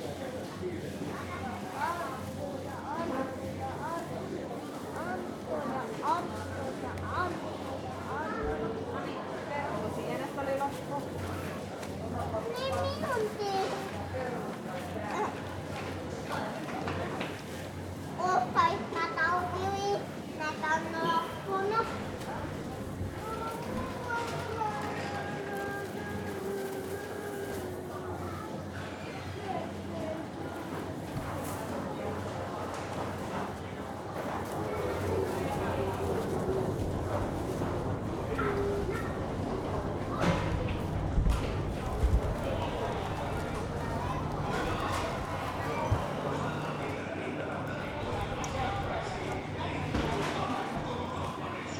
It's midsummer eve, a national holiday in Finland. People are shopping for their last-minute groceries. Especially a lot of families at the store. Zoom H5, default X/Y module inside the shopping cart.
Manner-Suomi, Suomi, 19 June, ~11:00